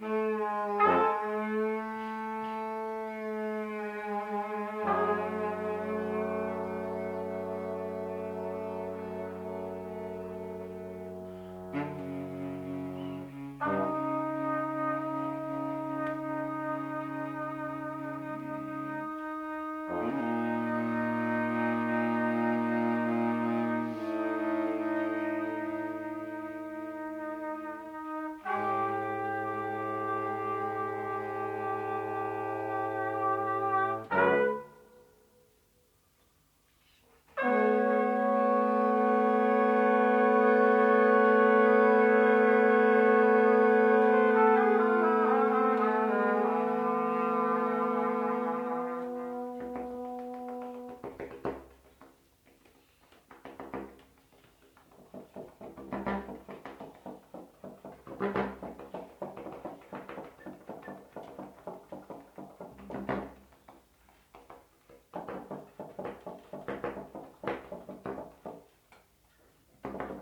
private concert, nov 23, 2007 - Köln, private concert, nov 23, 2007
excerpt from a private concert. playing: dirk raulf, sax - thomas heberer, tp - matthias muche, trb
Cologne, Germany, 29 May 2008